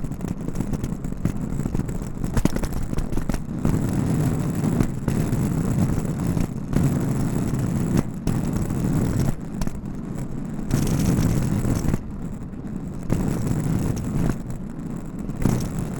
E Congress St, Detroit, MI, USA - USA Luggage Bag Drag 1
Recorded as part of the 'Put The Needle On The Record' project by Laurence Colbert in 2019.
Michigan, United States, 16 September 2019